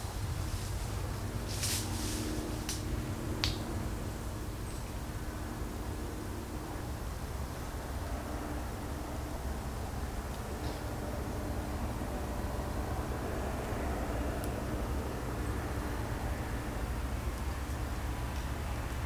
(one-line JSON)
{"title": "Flugplatz Pirna-Pratzschwitz, Söbrigener Weg, Pirna, Deutschland - flight day", "date": "2020-09-12 13:46:00", "description": "Glider pilots take off with the help of a cable winch and in between take off and land small aircraft", "latitude": "50.98", "longitude": "13.91", "altitude": "124", "timezone": "Europe/Berlin"}